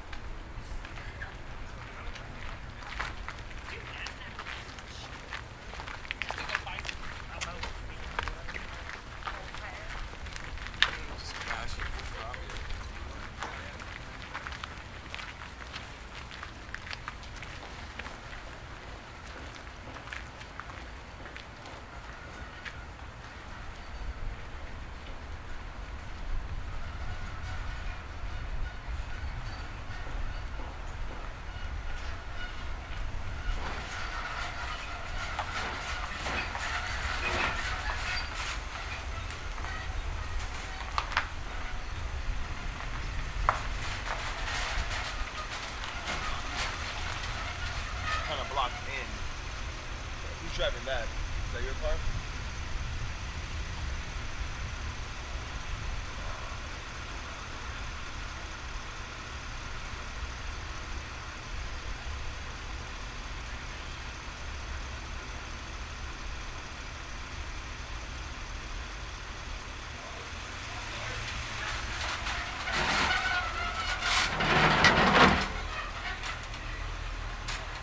Yesler/Washington Service Alley, Seattle, WA, USA - Blocked In (Underground Tour 2.5)
Above ground, walking along alley with loose gravel. Contractor's van, with metal ladder mounted on top, passes by, pauses while driver asks about vehicles blocking him in, continues north. "Bill Speidel's Underground Tour" with tour guide Patti A. Stereo mic (Audio-Technica, AT-822), recorded via Sony MD (MZ-NF810).